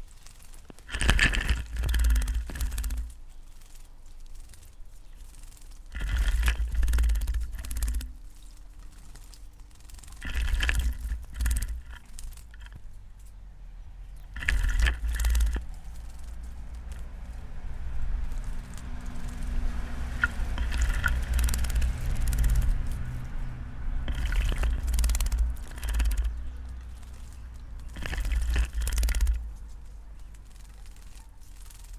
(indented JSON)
{
  "title": "Forest Garden, UK - bird bath",
  "date": "2022-09-22 16:43:00",
  "latitude": "52.29",
  "longitude": "1.16",
  "altitude": "55",
  "timezone": "Europe/London"
}